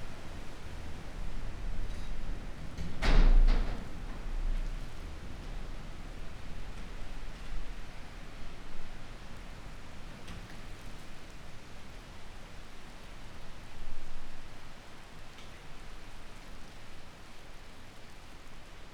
ex Soviet military base, Vogelsang - inside building during thunderstorm
seeking shelter inside building, during thunderstorm
(SD702, MKH8020)